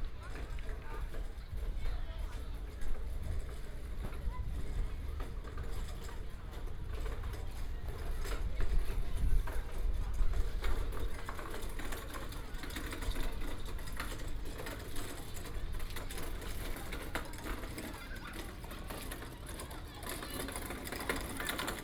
新勢公園, Taoyuan City - in the park
in the park, Child, Dog barking